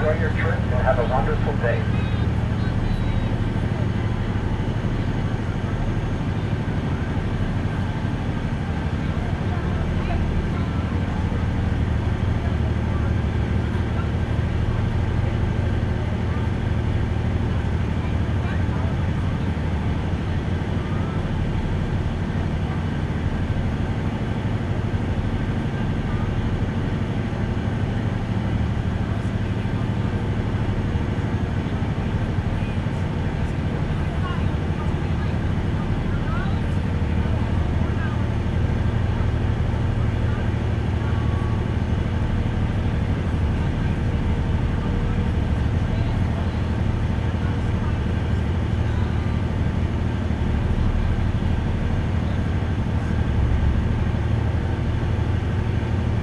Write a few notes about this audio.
Ferry (Ongiara) from Hanlan's Point terminal to mainland terminal.